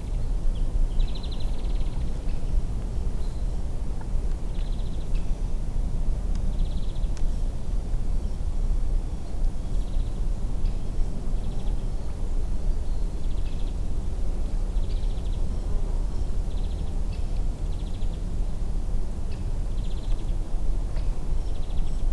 Poznan, Naramowice, meadows of Warta - pond in the morning
ambience at an artificial pond near Warta river. mostly birds and insects. occasionally a fish jumps out of the water. some timid frog croaks. two fisherman talking briefly. (roland r-07)
wielkopolskie, Polska